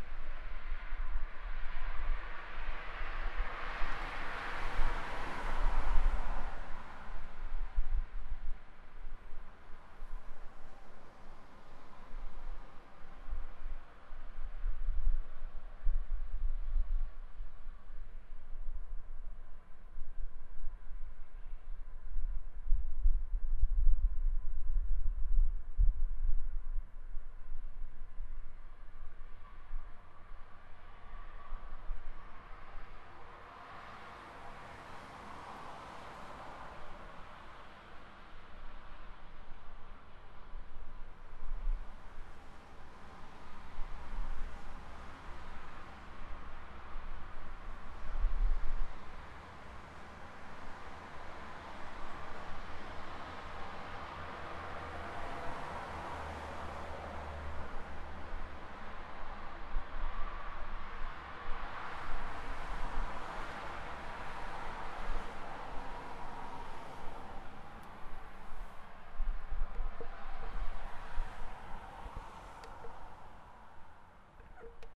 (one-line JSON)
{"title": "Rissen, Hamburg, Deutschland - Traffic", "date": "2016-02-06 20:08:00", "description": "Traffic rushing in and out the city", "latitude": "53.58", "longitude": "9.76", "altitude": "21", "timezone": "Europe/Berlin"}